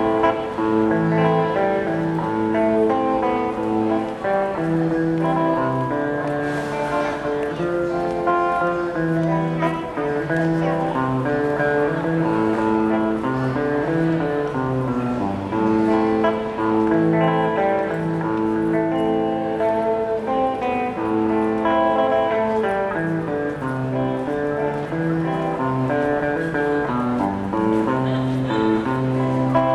Zuoying Station - Buskers
In the MRT station hall, Sony ECM-MS907, Sony Hi-MD MZ-RH1